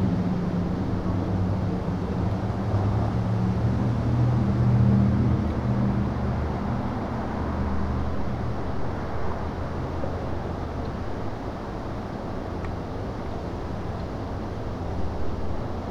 New Paces Ferry Rd NW, Atlanta, GA, USA - In The Middle Of Town
The middle of Vinings, Georgia. The recorder was set down on the sidewalk to capture the general ambiance of the area. The sound of traffic is quite prominent, and cars can be heard driving in close proximity to the recorder. A few sounds can be heard from the nearby shops, including a work team cleaning gutters in the distance. A few people also passed by the recorder on foot. Captured with the Tascam dr-100mkiii.